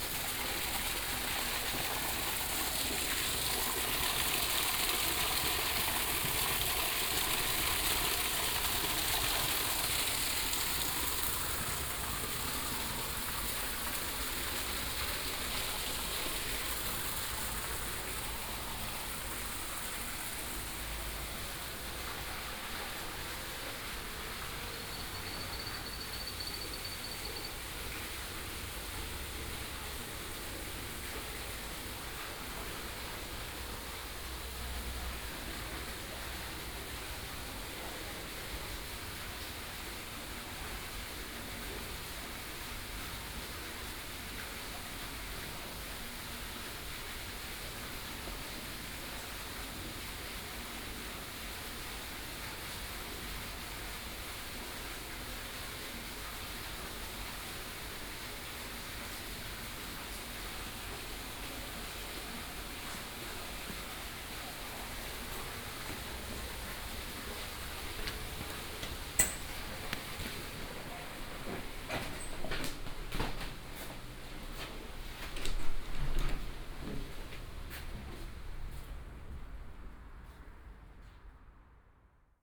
Botanischer Garten, Philosophenweg, Oldenburg - tropical house
short walk within the small tropical house of the Botanischer Garten, Oldenburg.
(Sony PCM D50, OKM2)